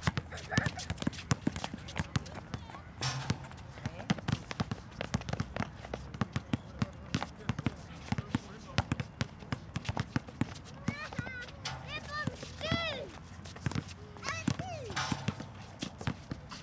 streetball player in the park, warm 1st november day